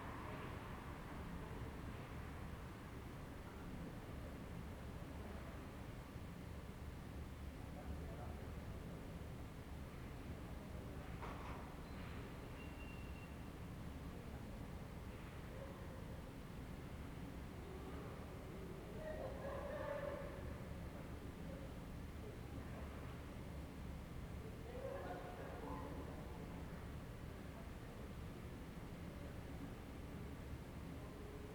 Ascolto il tuo cuore, città, I listen to your heart, city. Several chapters **SCROLL DOWN FOR ALL RECORDINGS** - Round midnight students at college in the time of COVID19: Soundscape
"Round midnight students at college in the time of COVID19": Soundscape
Chapter CXXXVI of Ascolto il tuo cuore, città. I listen to your heart, city
Thursday, October 21st 2020, six months and eleven days after the first soundwalk (March 10th) during the night of closure by the law of all the public places due to the epidemic of COVID19.
Start at 11:36 p.m. end at 00:07 a.m. duration of recording 30’41”